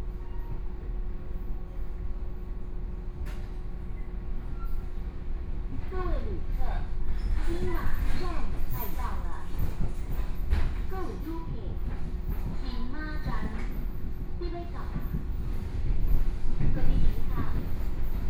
Su'ao Township, Yilan County - Local Train
from Su'aoxin Station to Dongshan Station, Binaural recordings, Zoom H4n+ Soundman OKM II